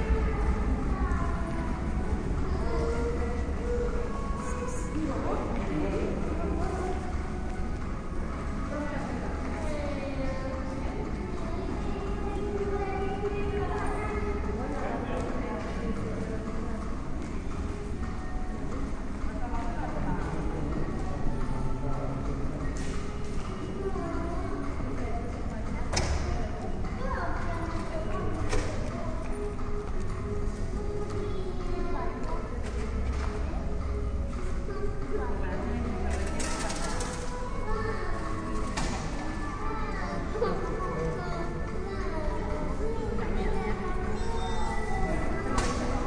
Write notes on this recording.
at metro station, loading a travel card. soft music and voices of children